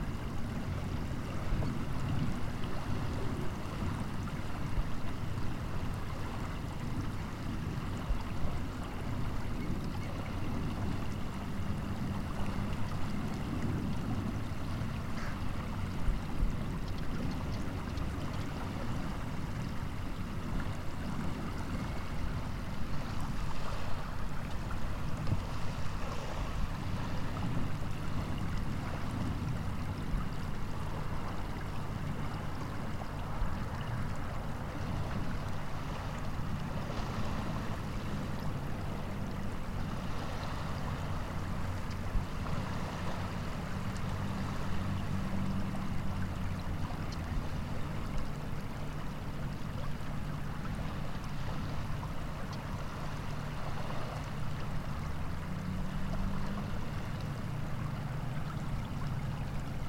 Port Hardy, BC, Canada - Lochness Monster
Sound of the Lochness Monster. Recording with Love from the beach
28 November, 14:53